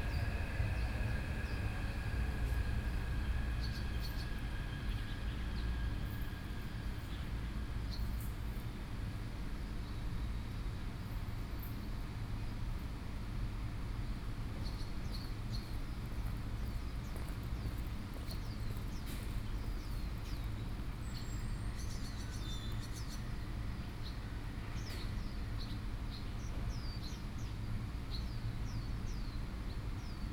Fuxinggang Station, Beitou - Hot evening
Seat beside the MRT Stations, Sony PCM D50 + Soundman OKM II
台北市 (Taipei City), 中華民國